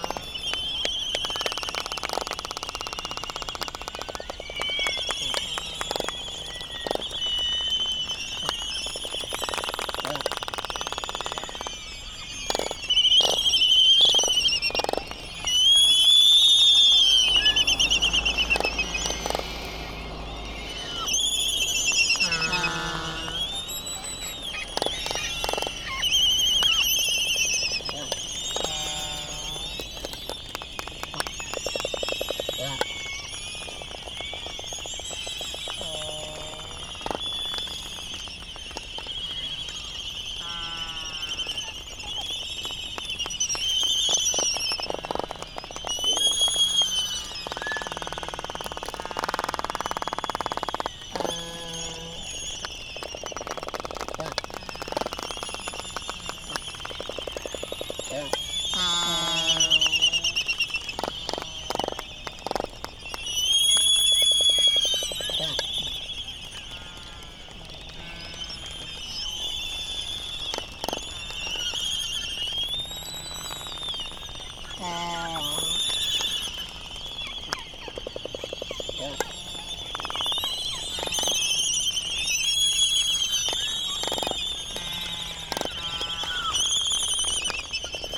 United States Minor Outlying Islands - Laysan albatross dance soundscape ...
Laysan albatross dance soundscape ... Sand Island ... Midway Atoll ... laysan calls and bill clapperings ... background noise from buggies ... open lavalier mics ... warm ... slightly blustery morning ...